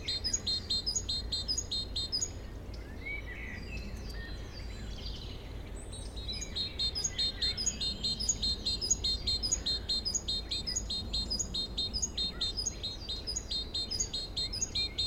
Chindrieux, France - mésanges, rouge-gorge
Les chants du printemps dans les bois de Chindrieux, mésanges et rouge-gorge, circulation de la RD991 dans le fond.